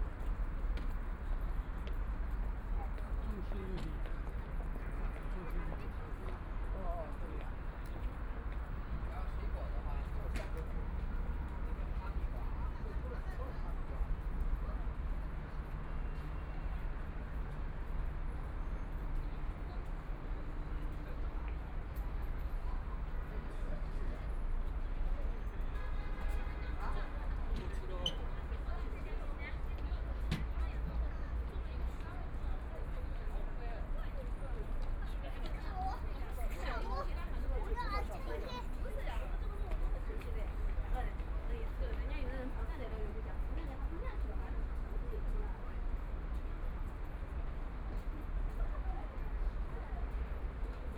Lujiazui, Pudong New Area - Walking on the bridge
Below the vehicles on the road, Most travelers to and from the crowd, Binaural recording, Zoom H6+ Soundman OKM II
Pudong, Shanghai, China